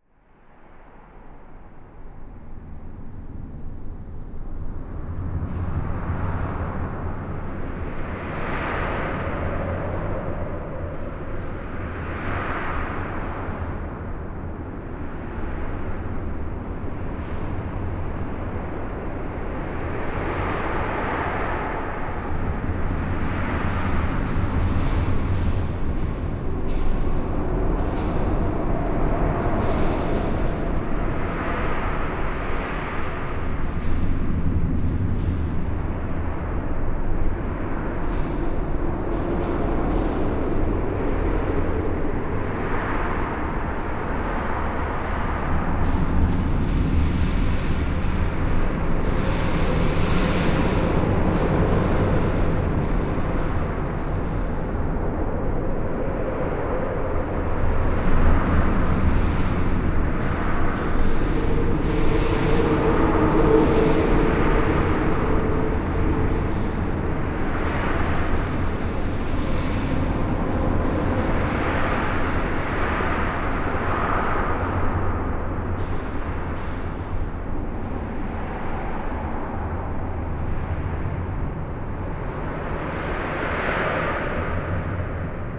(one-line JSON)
{"title": "Namur, Belgique - The viaduct", "date": "2016-04-19 07:15:00", "description": "This viaduct is one of the more important road equipment in all Belgium. It's an enormous metallic viaduct on an highway crossing the Mass / Meuse river. All internal structure is hollowed.\nThis recording is made inside the box girder bridge. Trucks make everything terribly vibrate, and all duckboard is slowly jumping. It makes this parasite bling-bling sound, but that's normal, as it's a segmental bridge. Swings are huge !", "latitude": "50.47", "longitude": "4.92", "altitude": "73", "timezone": "Europe/Brussels"}